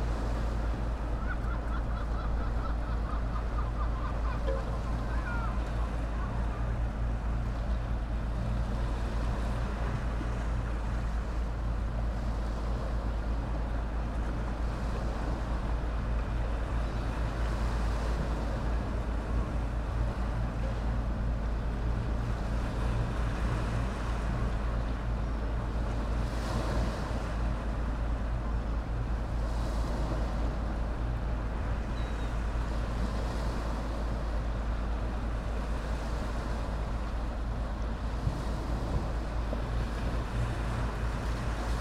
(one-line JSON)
{"title": "from/behind window, Novigrad, Croatia - winter morning", "date": "2012-12-28 10:07:00", "description": "winter sound scape, waves, seagulls, fishing boats, masts", "latitude": "45.32", "longitude": "13.56", "timezone": "Europe/Zagreb"}